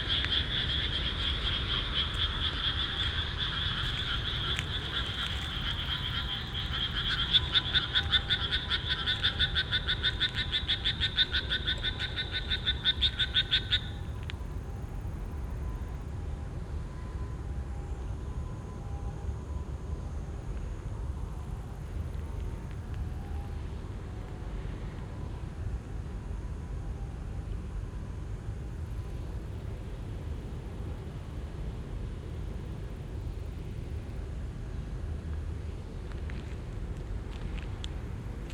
takasaki, kaminakai, frogs in rice field
in the night, fro concert in he rice fields - in the distance an ambulance passing by - cars on the street
international city scapes - topographic field recording
25 July